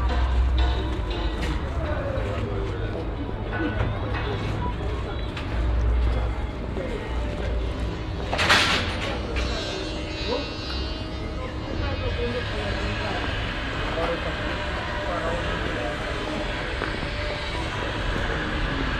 Central Area, Cluj-Napoca, Rumänien - Cluj, street market
At a small street market for local handcrafted products. The sound of traders voices, working sounds at stands and steps on the old cobble stone street. At the end the sound of children voices as a kindergarden group passes by and the wheels of a small wooden cart.
international city scapes - topographic field recordings and social ambiences
Cluj-Napoca, Romania, 15 November